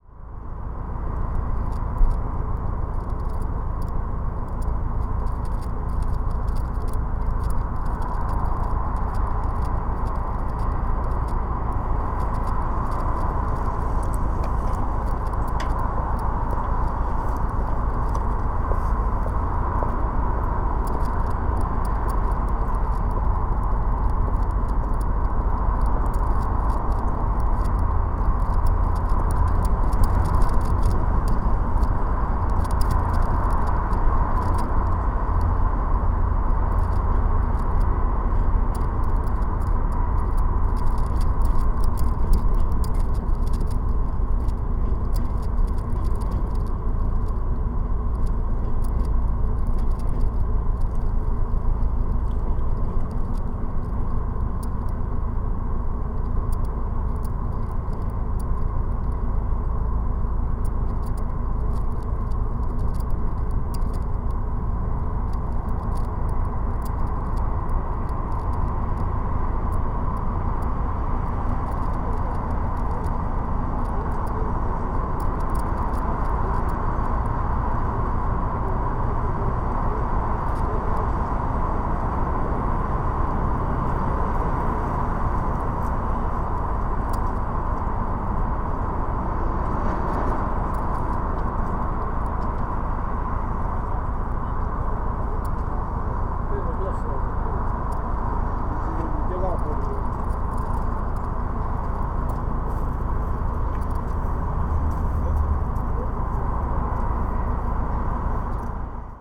Tallinn, Baltijaam platiform electrical conduit - Tallinn, Baltijaam platiform electrical conduit (recorded w/ kessu karu)
hidden sounds, plastic scraps blowing against an electrical conduit on a platform pole at Tallinns main train station.
Tallinn, Estonia, 20 April 2011